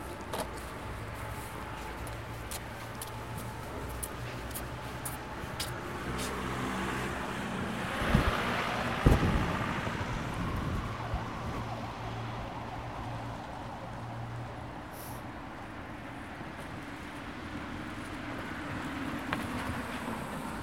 rog Traugutta i Sienkiewicza, Lodz
Traugutta Sienkiewicza Lodz
18 November 2011, 10:05